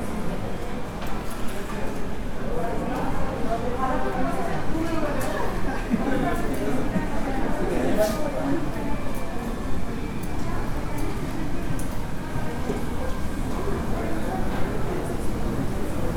León, Guanajuato, Mexico - Caminando por dentro de Liverpool.
Walking inside Liverpool.
I made this recording on june 15th, 2022, at 2:38 p.m.
I used a Tascam DR-05X with its built-in microphones.
Original Recording:
Type: Stereo
Esta grabación la hice el 15 de junio 2022 a las 14:38 horas.
Usé un Tascam DR-05X con sus micrófonos incorporados.
Guanajuato, México